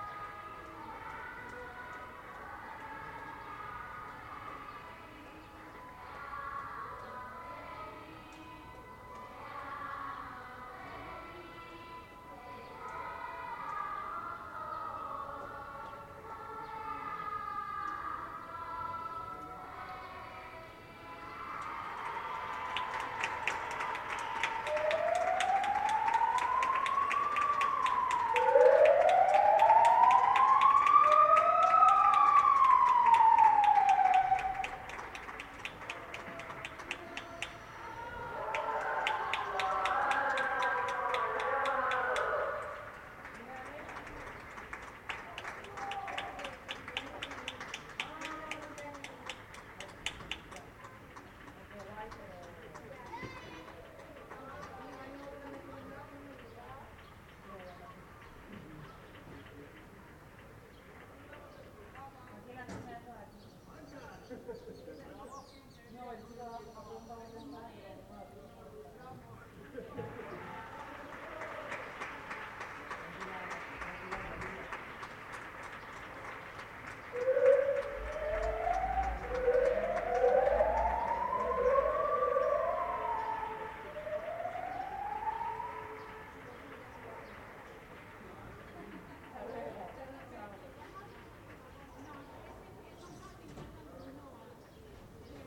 Plaza del Azulejo, Humanes de Madrid, Madrid, España - Cumpleaños Feliz en época de confinamiento Covid
Estábamos en confinamiento total por el covid-19 y el día 29 de Marzo debió ser el cumpleaños de alguien en Humanes, y desde mi balcón grabé una música de Cumpleaños Feliz que sonaba a lo lejos. Mas tarde se escuchan aplausos y la sirena de coches de policías con megáfonos felicitando a alguien por su cumpleaños. Lo cierto es que son paisajes que jamás pensamos que sucederían pero me alegra ver esa manera tan bonita de animarnos. ¡Cumpleaños Feliz!
Grabadora Zoom h1n.